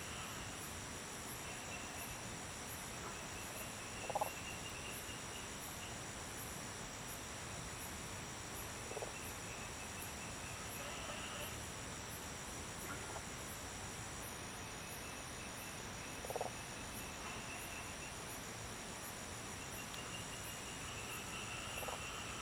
種瓜路, 桃米里 Puli Township - Frogs chirping
Various types of frogs chirping
Zoom H2n MS+ XY